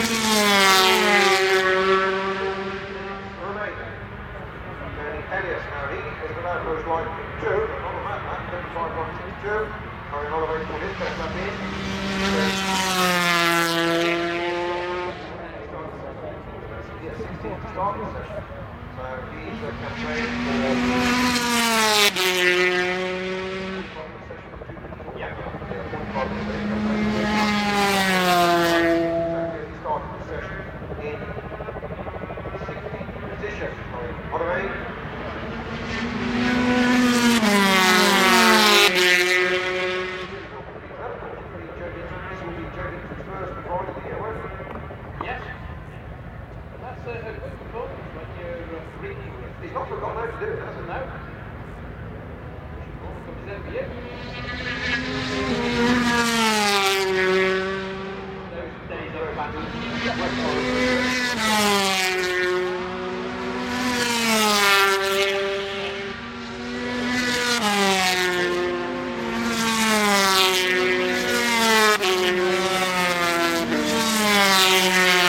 Castle Donington, UK - British Motorcycle Grand Prix 2003 ... 250 ...
British Motorcycle Grand Prix 2003 ... 250 qualifying ... one point stereo mic to mini-disk ... commentary ... time approx ...